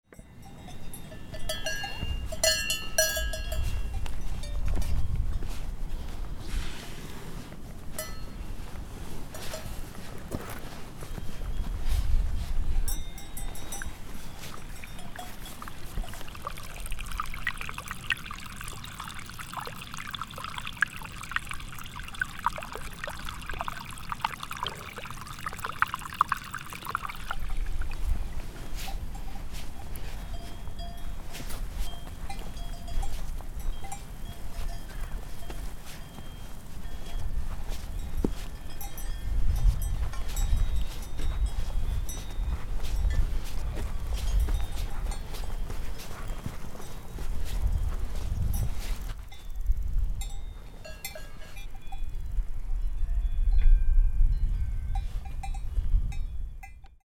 Wandern nach der Krummenalp
Wandern in Richtung Krummenalp und Restipass, noch fast eben, kein Aufstieg im Moment, Wetter ideal, nicht zu heiss und trocken